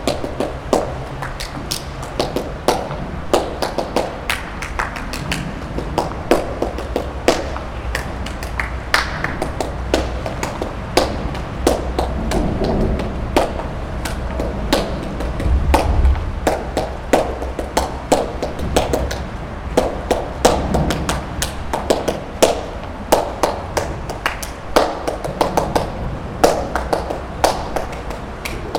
La Motte-Servolex, France - Sous le pont
Sur la piste cyclable qui mène à Chambéry, l'Avenue Verte arrêt pour écouter ce qui se passe sous le pont de l'A41 et tester l'acoustique.
20 February 2014